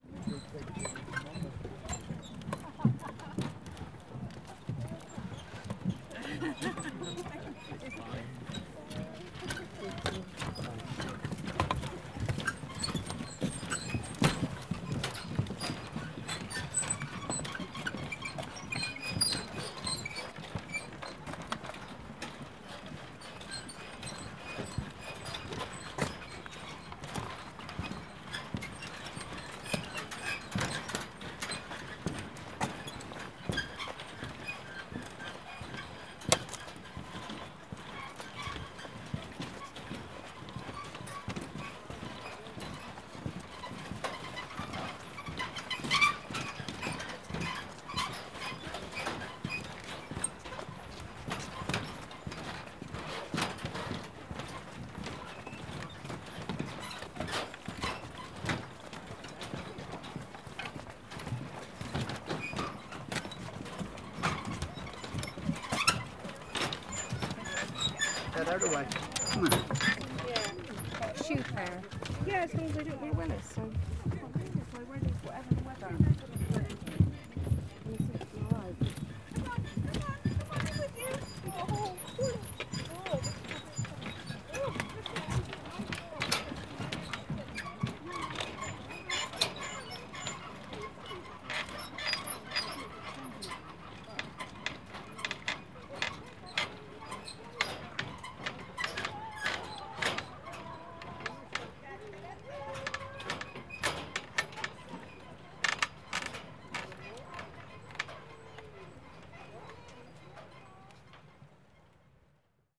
River Wye, Forest of Dean, UK - Footbridge from England to Wales
Walking across the squeaky footbridge over the River Wye. Only 6 people are allowed on the bridge at any time; the more people walking on the bridge the more it bounces and squeaks.
(Zoom H4n internal mics)